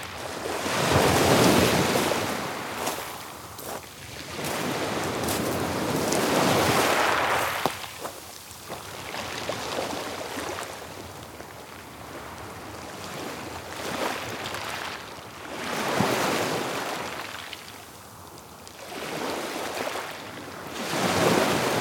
La Rochelle, France - Galets de lHoumeau
Gros plan Plage de Galets L'Houmeau
Sac & ressac
couple ORTF DPA 4022 + Rycotte + AETA
L'Houmeau, France, 6 June 2015